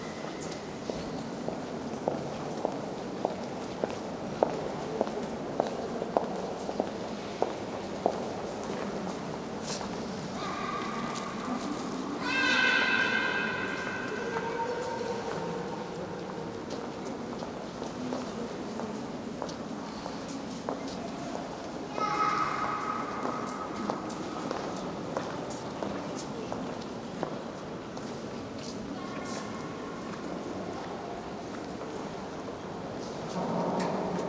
Dzerginsk, Nikolo-Ugreshsky Monastery, inside Spaso-Preobragensky Cathedral
Province of Moscow, Russia, 22 May 2011